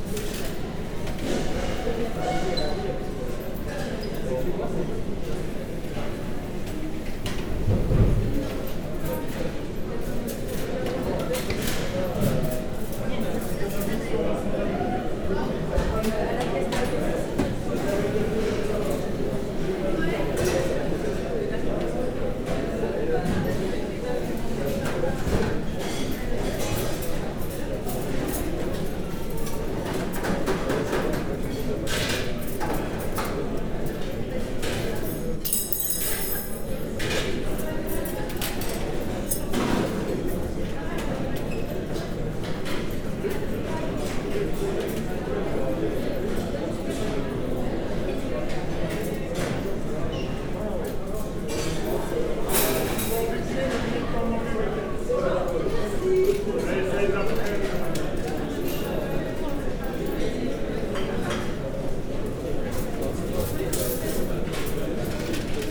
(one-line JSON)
{"title": "Centre, Ottignies-Louvain-la-Neuve, Belgique - University restaurant", "date": "2016-03-11 13:01:00", "description": "The noisy ambience of an university restaurant. Students can find here cheap but good foods.", "latitude": "50.67", "longitude": "4.61", "altitude": "117", "timezone": "Europe/Brussels"}